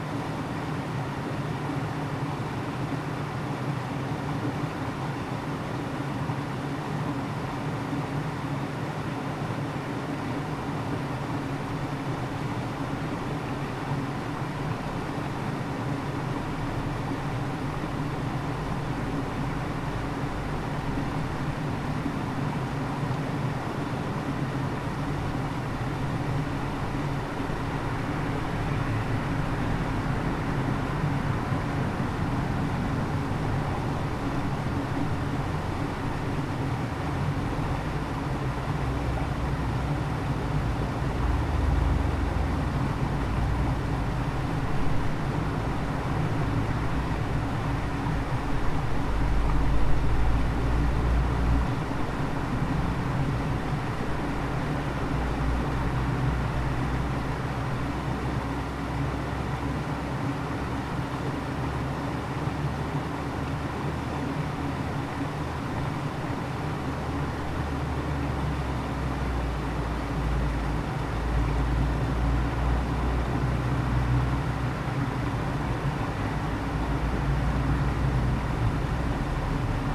Rte des Bauges, Entrelacs, France - Résonances
Le pont du Montcel au dessus du Sierroz, je place le ZoomH4npro dans un tuyau d'écoulement à sec, qui joue le rôle d'un filtre résonateur passe bande, colorant les bruits de l'eau et du passage des véhiculs.